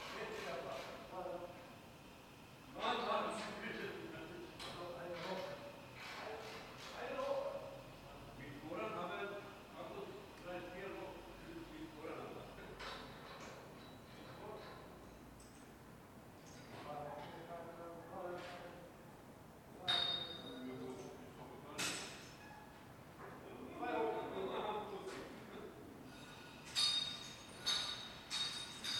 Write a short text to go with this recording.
dismantling of scaffolding after renovating the Wolf-Dietrich-Mausoleum